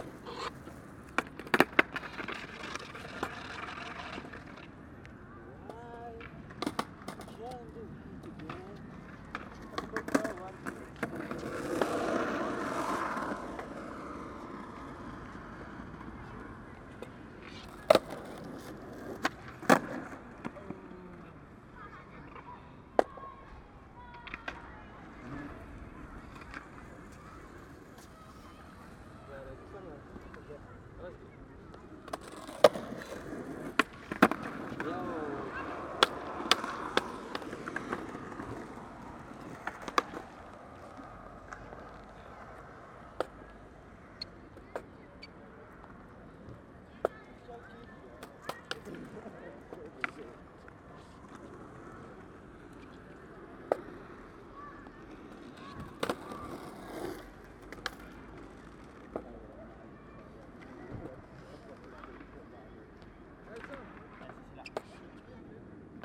København, Denmark - Skateboarders
On a big hill streaked with curved lines (it's superb), skateboarders playing during a sunny afternoon.